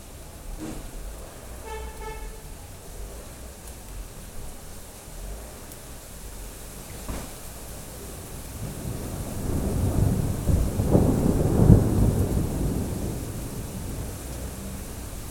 Vila de Gràcia, Barcelona, Barcelona, España - RAIN03112014BCN 01
Raw recording of rain.